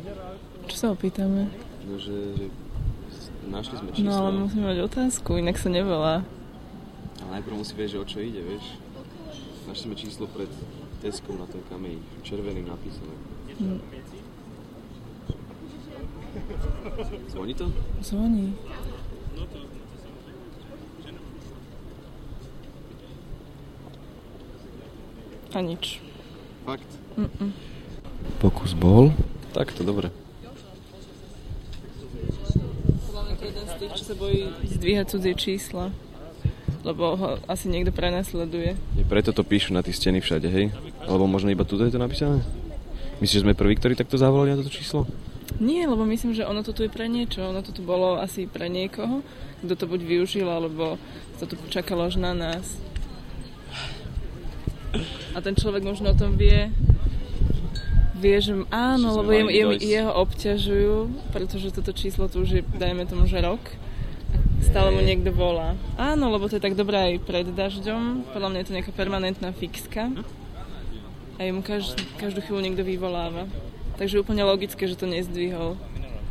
placetellers walk :: kamenne namestie
abstract:
i heard you like tesco... why? :: it's not that i love it, but in this city it is the place to which i have the closest relation... maybe i should be ashamed of that... i love to come to this place during walks at night... cause there are quite strange things happening... :: which strange things? :: you can meet very interesting people here... :: which is your most exciting experience at tesco? :: you don't wanna know... :: he stole! :: i didn' t steal anything, but in fact it was much more interesting... i didn't do anything bad... :: not any illegal activity? how boring... :: look, somebody wrote down a phone number here :: on kamenne square? in front of tesco? this must be of some service related to the prior department store... :: rather yes :: so, should we call there? :: okay, let's call... :: maybe it was dictated by somebody or it' s even a message left for someone... :: 290 658 :: you think thats a five?